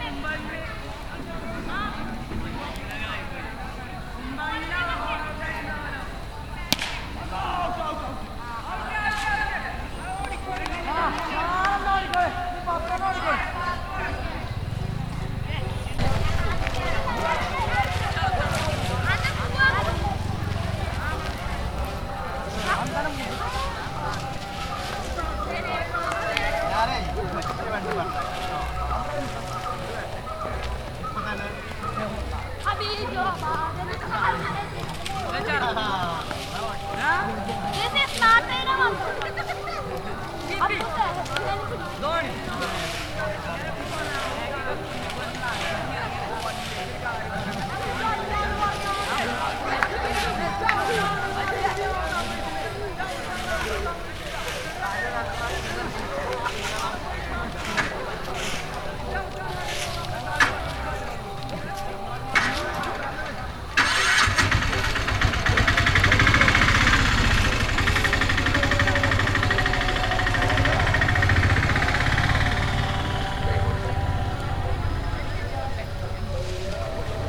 Chethalloor, Kerala, Indien - Cricket being played next to an ancient indian bath
A dry grass field, boys playing cricket, chanting songs from the nearby Shiva- and Kali Temple, a motorbike starting and driving through the playground, a man and than later a women pass by the path in which i am recording next to. When I turn around there is a huge rectangle basin with stairs leading down towards the water on one side and a washing house for women to hide themselves while bathing. Two women washing there clothes besides the washing house.
28 April 2016, 17:13